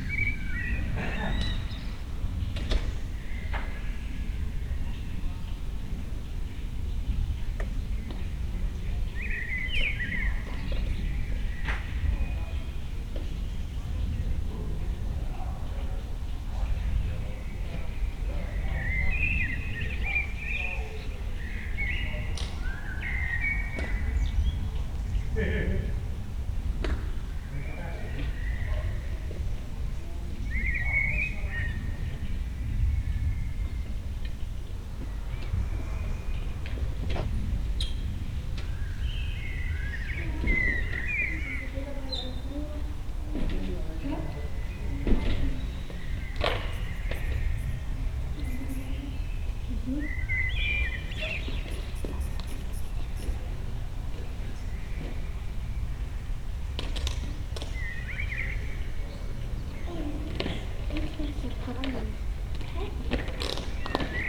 Beselich Niedertiefenbach, Grabenstr. - quiet summer evening
quet summer evening in small viallge. two kids are still on the street, nothing special happens.